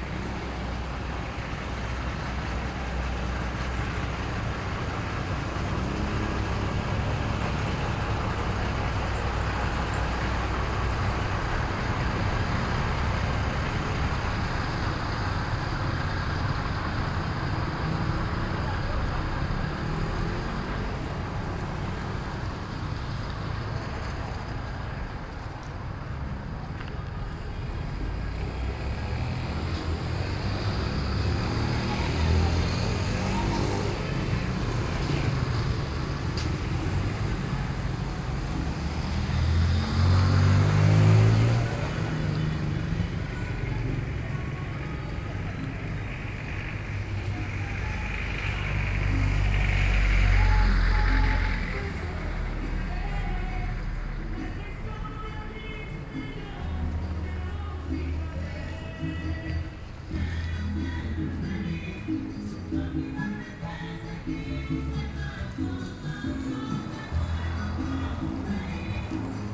Soundwalk around Chigorodó's market place.
By the time the recording was made the market was already closing down. There wasn't any pre-established route. It was more a derive exercise in which I followed my ears everywhere.
Zoom H2n with a DIY stereo headset with Primo E172 mic capsules.
The entire collection of Chigorodó's recordings on this link